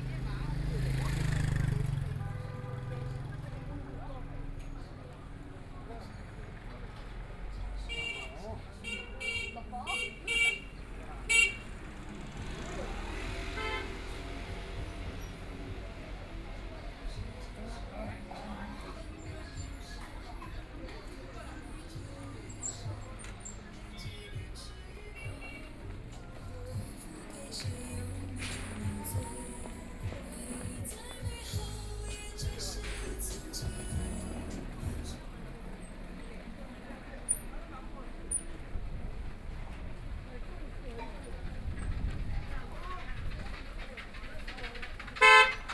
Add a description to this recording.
Walking through the old neighborhoods, Market, Fair, The crowd gathered on the street, Voice chat, Traffic Sound, Binaural recording, Zoom H6+ Soundman OKM II